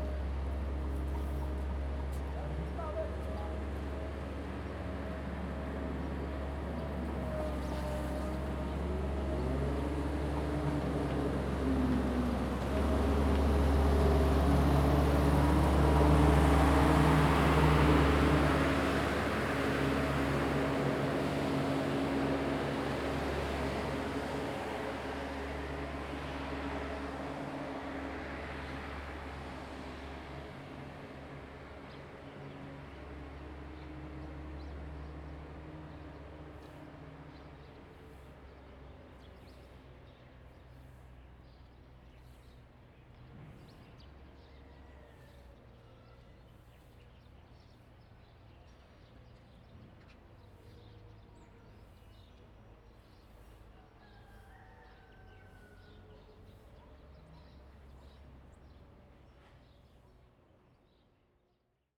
黃厝, Lieyu Township - Small village
Birds singing, Small village, Construction Sound
Zoom H2n MS +XY
福建省, Mainland - Taiwan Border